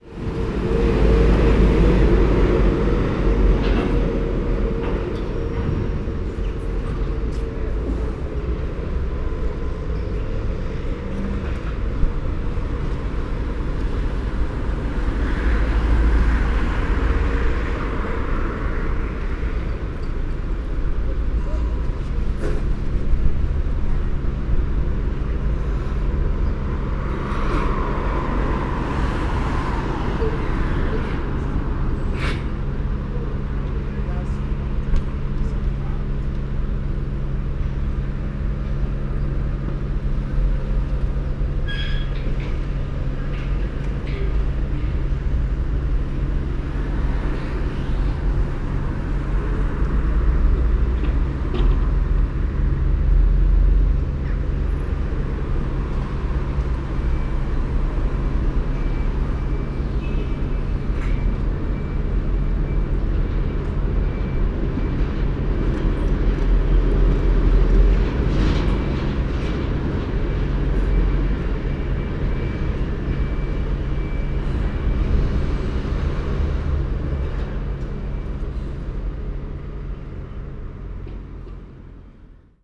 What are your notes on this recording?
Stereo Parabolic mic recording (Telinga into fostex FR2-LE). Binckhorst Mapping Project.